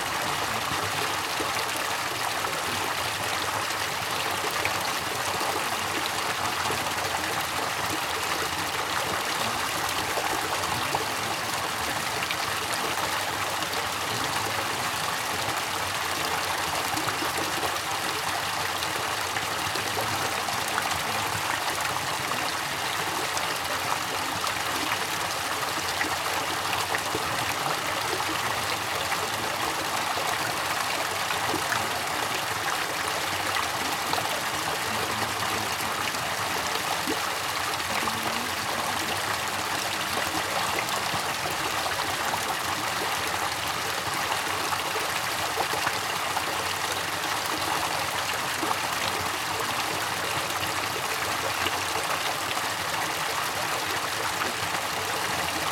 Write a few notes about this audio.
Focus on water, bells at 5, people talking nearby. Tech Note : Sony PCM-D100 internal microphones, wide position.